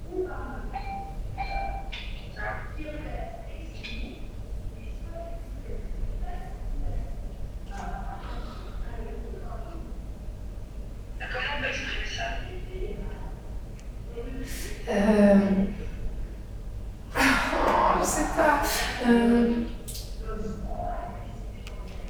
{"title": "Quartier des Bruyères, Ottignies-Louvain-la-Neuve, Belgique - I didn't make my homeworks", "date": "2016-03-11 16:40:00", "description": "A girl discussing in a corridor, with a skype communication, because she didn't do her homeworks.", "latitude": "50.67", "longitude": "4.61", "altitude": "117", "timezone": "Europe/Brussels"}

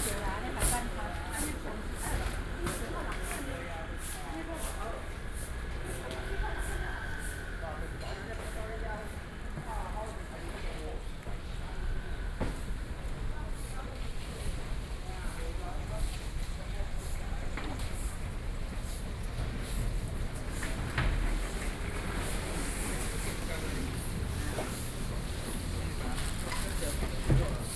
{"title": "Lane, Hǔlín St, Xinyi District - Traditional markets", "date": "2012-11-07 06:49:00", "latitude": "25.04", "longitude": "121.58", "altitude": "24", "timezone": "Asia/Taipei"}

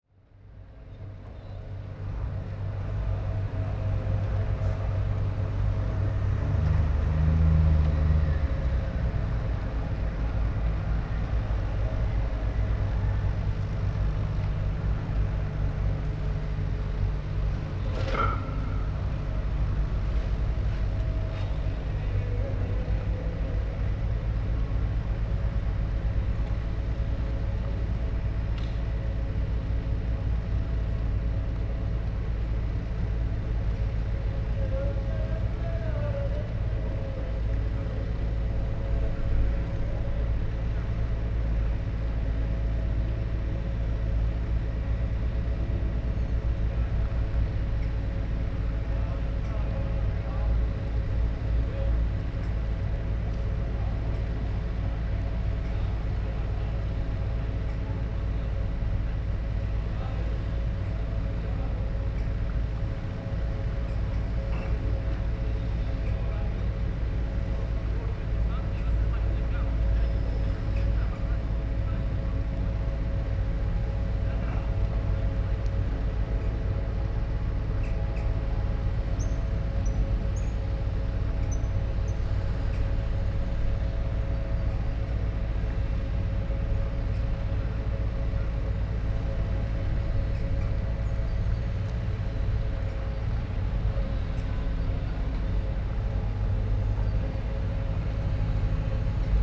{"title": "Jupiterkade, Binckhorst, Den Haag - work by canal", "date": "2012-02-28 11:45:00", "description": "drones from digging machines on opposite bank, voices, singing, birds. Soundfield Mic (Blumlein decode from Bformat) Binckhorst Mapping Project", "latitude": "52.06", "longitude": "4.34", "altitude": "2", "timezone": "Europe/Amsterdam"}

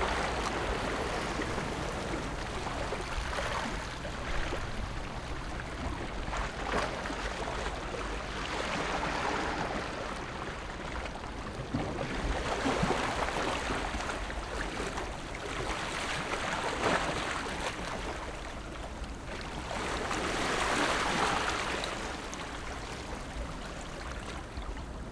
{"title": "Beigan Township, Matsu Islands - In the dock", "date": "2014-10-13 14:39:00", "description": "Sound of the waves, Very hot weather, Small port, Pat tide dock\nZoom H6 XY +Rode NT4", "latitude": "26.21", "longitude": "119.97", "altitude": "7", "timezone": "Asia/Taipei"}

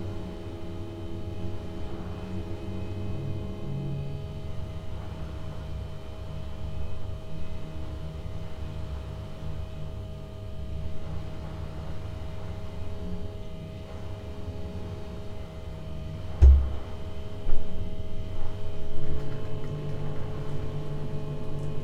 {"title": "room, Novigrad, Croatia - wind instrument", "date": "2012-09-13 00:29:00", "description": "room as wind instrument, refrigerator, with my soft contribution while opening/closing the doors", "latitude": "45.32", "longitude": "13.56", "timezone": "Europe/Zagreb"}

